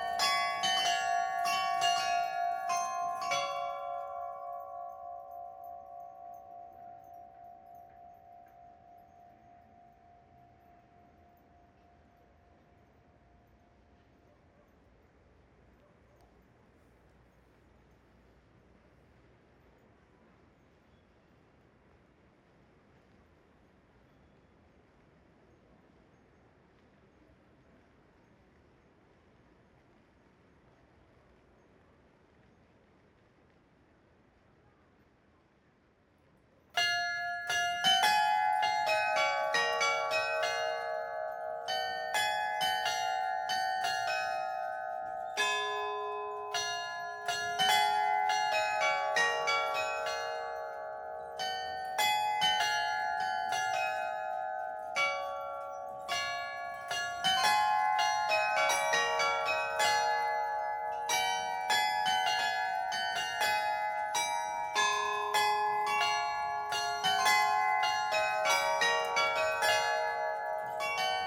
Glockenturm, Salzburg, Österreich - Glockenspiel
Zuerst hören wir zwei Kirchenglocken 11 Uhr schlagen. Dann das Glockenspiel.